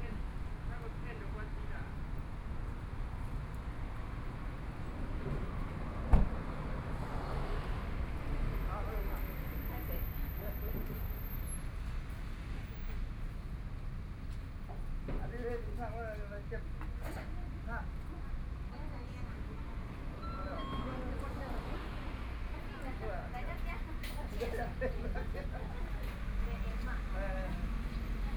Guoxing 1st St., Hualien City - Sitting intersection
Station regional environmental sounds, In front of a convenience store, Traffic Sound, Binaural recordings, Sony PCM D50+ Soundman OKM II
Hualian City, Hualien County, Taiwan, 5 November 2013